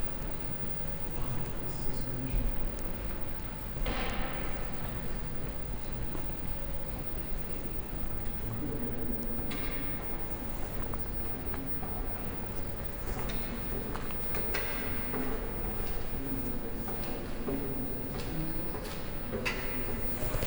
alleecenter hamm - walk-through to West entrance
walking from upstairs, down the stairs of the escalator, along the closed shops to the West entrance doors and out…